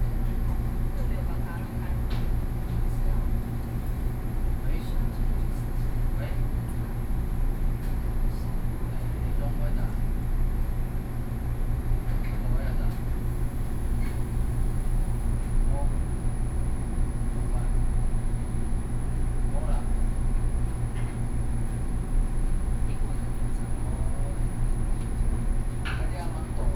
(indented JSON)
{
  "title": "Yangmei Station, Taoyuan County - Train crossing",
  "date": "2013-08-14 12:49:00",
  "description": "In the compartment, Train crossing, Sony PCM D50+ Soundman OKM II",
  "latitude": "24.91",
  "longitude": "121.15",
  "altitude": "155",
  "timezone": "Asia/Taipei"
}